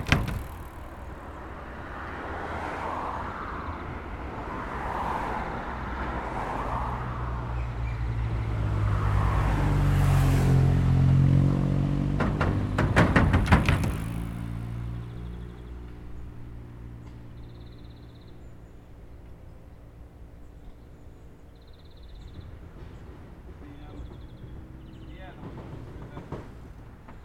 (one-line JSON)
{"title": "Rte du Bourget, Viviers-du-Lac, France - Passerelle cycliste", "date": "2022-07-25 11:35:00", "description": "Près de la passerelle cycliste, un peut plus loin un groupe de cyclistes anglais répare une crevaison. Beaucoup de trottinettes électriques aussi maintenant sur cette piste.", "latitude": "45.65", "longitude": "5.89", "altitude": "234", "timezone": "Europe/Paris"}